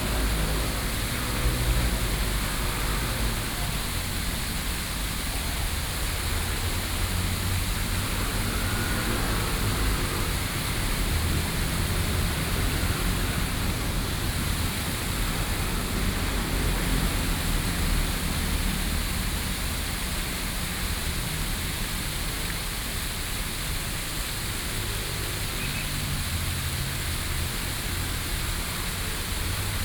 Sec., Fuxing S. Rd., Da’an Dist., Taipei City - Face fountain
Face fountain, busy traffic
Taipei City, Taiwan, 17 July